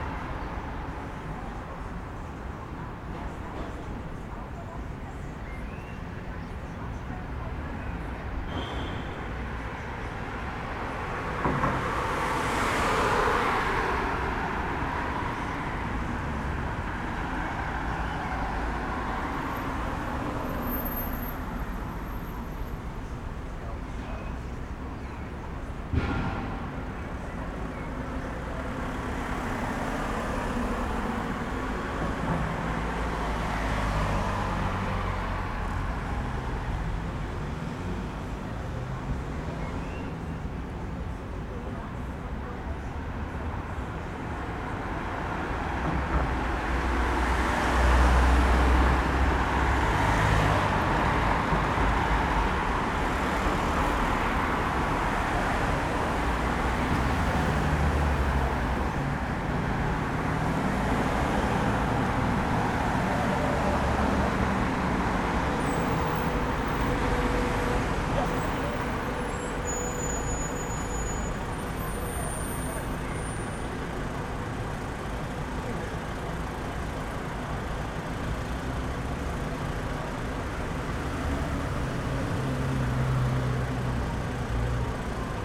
Bus Station, Nova Gorica, Slovenia - The sounds of cars on the road
The recording was made on the bus station overlooking the road.